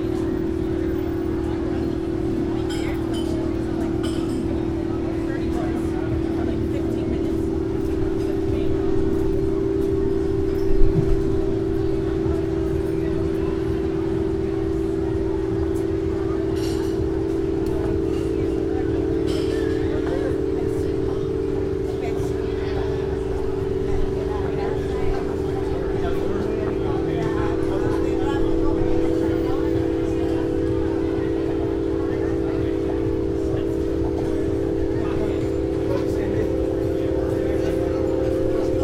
ArtCenter South Florida Studios, South Beach, Miami Beach, FL, USA - The Sunken Hum Broadcast 3 - Listening Gallery at ArtCenter South Florida Studios
As I walked towards the corner of Lincoln Ave and some little alley, I noticed a constant slightly pulsing drone covering the street. It turned out to be "The Listening Gallery" at the ArtCenter South Florida Studios. People just constantly stream by the sounds. The piece playing is "RADIANCE 2 by Armando Rodriguez."
Recorded on a Zoom H4 with a hot pink windsock as my friend stood a few feet away looking very embarrased and trying to pretend she wasn't with me.